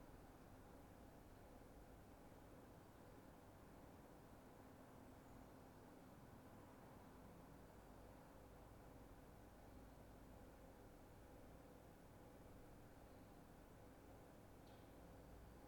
Boskapel, Buggenhout, België - Boskapel
[Zoom H4n Pro] Sound from inside the Boskapel, almost complete silence